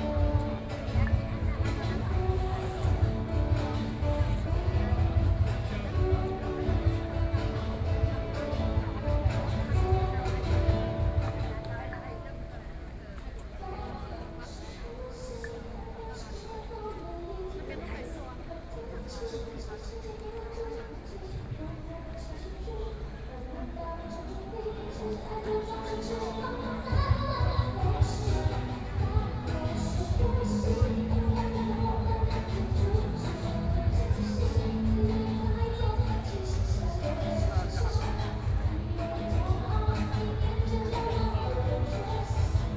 Legislature, Taipei - Protest party
Protest party, A young soldier deaths, Zoom H4n+ Soundman OKM II
台北市 (Taipei City), 中華民國, 20 July 2013